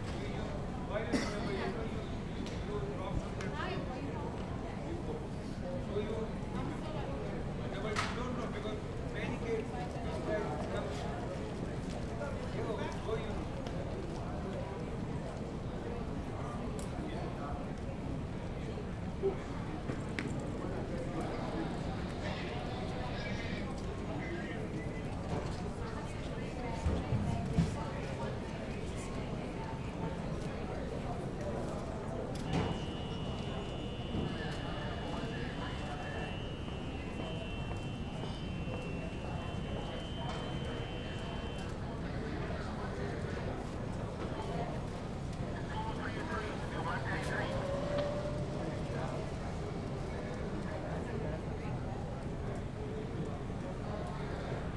Newark Airport, USA - Newark Airport
airport terminal Newark
NJ, USA, November 2008